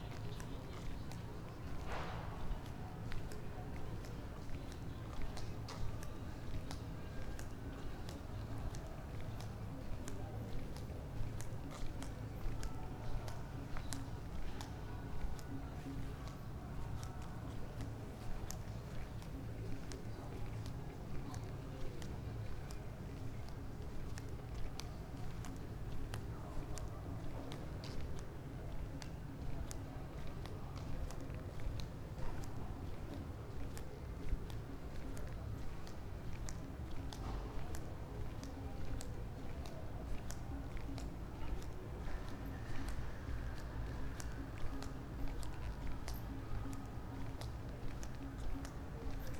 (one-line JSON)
{"title": "Via Giacomo Ciamician, Trieste, Italy - night walk", "date": "2013-09-07 00:41:00", "description": "street at night with steps", "latitude": "45.65", "longitude": "13.77", "altitude": "44", "timezone": "Europe/Rome"}